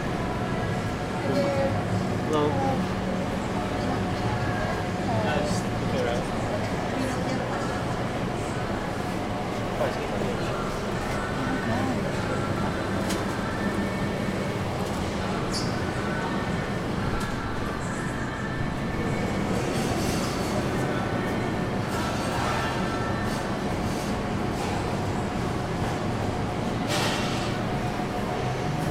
Transit Village, Boulder, CO, USA - WholeFood
1 February, 20:00